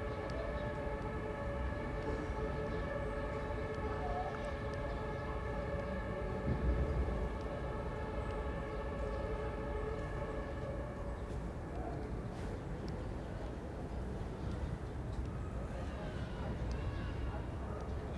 Bergfriedstraße, Berlin, Germany - Backyard reverb – so much that sirens becomes continuous
A walk recording through the Hinterhof on the map, voice reverberate in the tunnel under the apartment blocks. A robin sings - good to hear they've started again after their summer/early autumn break. The acoustics in here are so strong that sirens sounds merge into a continuous tone.